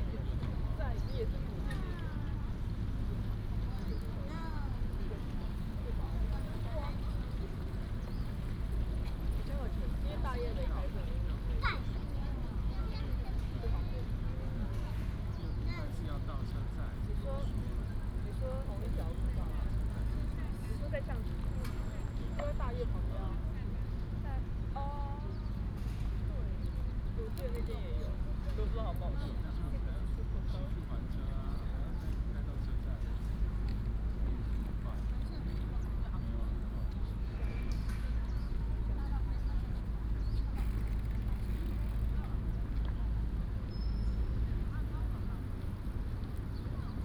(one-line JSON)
{"title": "Main library, National Taiwan University - In the Plaza", "date": "2016-03-04 17:50:00", "description": "in the university, In the Plaza, Traffic Sound, Bicycle sound", "latitude": "25.02", "longitude": "121.54", "altitude": "17", "timezone": "Asia/Taipei"}